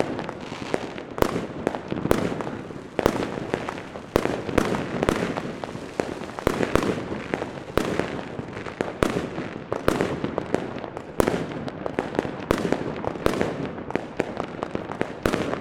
Taikos g., Ringaudai, Lithuania - Fireworks on the new years eve 2021
Short recording of fireworks going off around a street corner during new year's eve celebration of 2021. Recorded with ZOOM H5.
Kauno rajono savivaldybė, Kauno apskritis, Lietuva, 1 January 2021